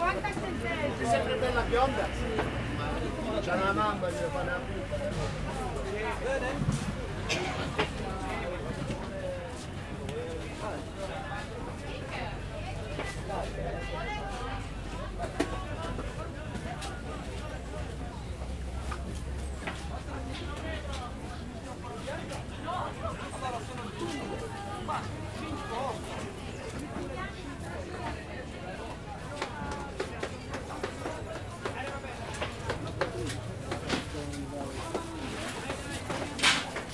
Aurora, Torino, Italy - Piazza della Repubblica market/mercato
19 March 2015, 09:02